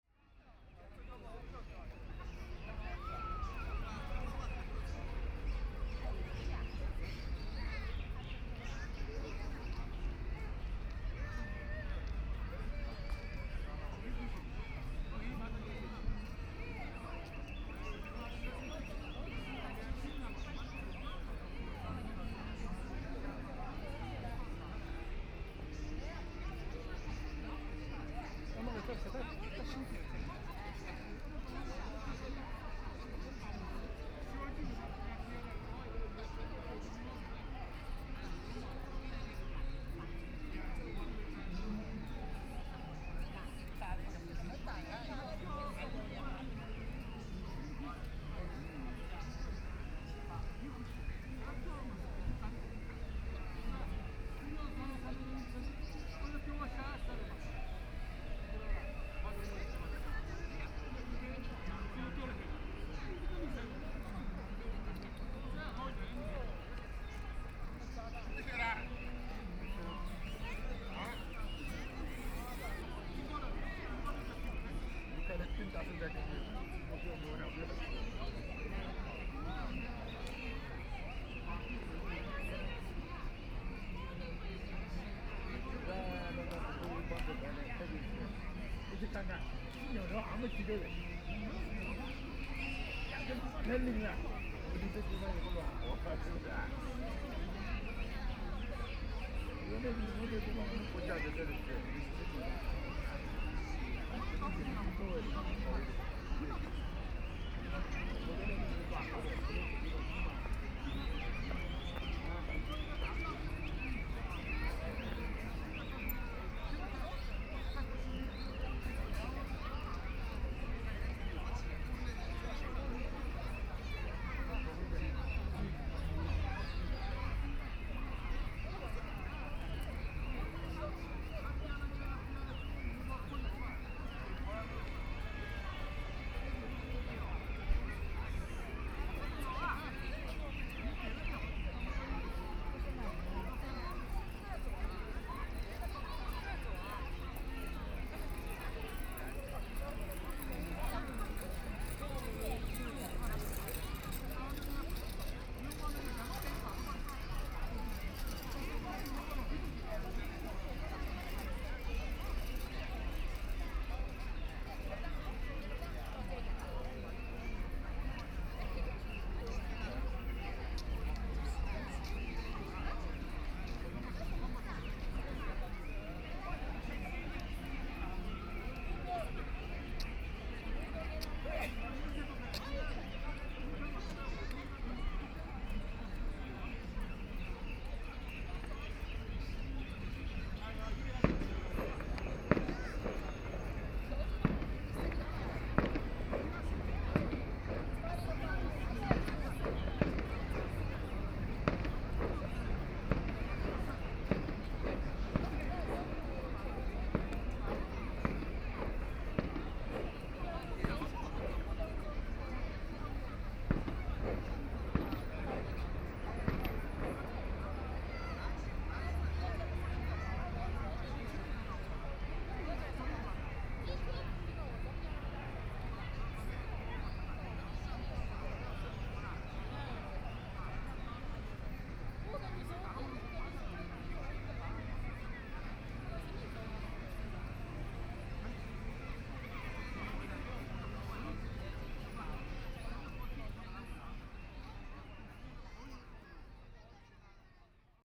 Birdsong, Voice conversations between elderly, Many tourists and residents to enter the park, Binaural recording, Zoom H6+ Soundman OKM II